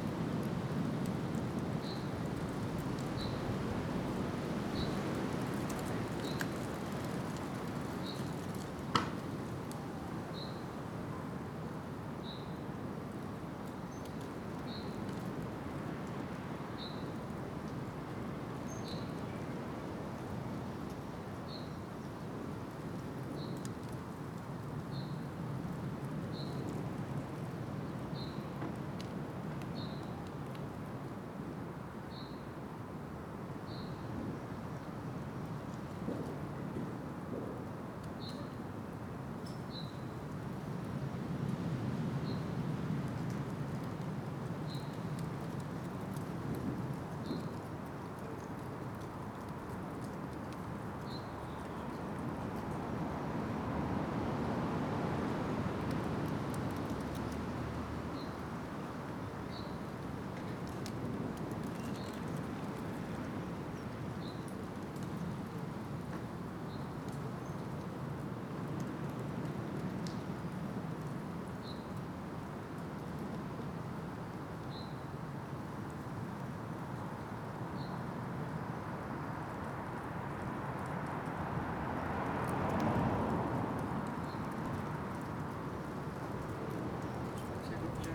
The Poplars High Street Elmfield Road North Avenue
A slate
slipped from a ridge
lies in the garage gutter
A man with two dogs
curious
stops to talk
The song of the reversing ambulance
echoes along the avenue
Marks on the window frame
beneath the eaves
possibility of a nest
England, United Kingdom, 11 March, 09:40